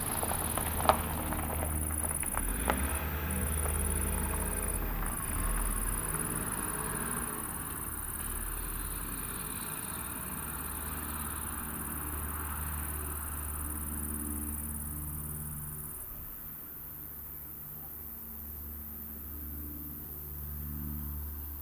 Am Adelsberg, Bad Berka, Germany - Quiet spaces beneath Paulinenturm, Bad Berka insects and drones.
Best listening with headphones.
Vibrant insects chorus, sirenes, air drones, rocks and vehicle engine, distant birds.
This location is beneath a tourist attraction "Paulinenturm".The Paulinenturm is an observation tower of the city of Bad Berka. It is located on the 416 metre high Adelsberg on the eastern edge of the city, about 150 metres above the valley bottom of the Ilm.
Recording and monitoring gear: Zoom F4 Field Recorder, LOM MikroUsi Pro, Beyerdynamic DT 770 PRO/ DT 1990 PRO.
Thüringen, Deutschland, 23 July 2020, ~14:00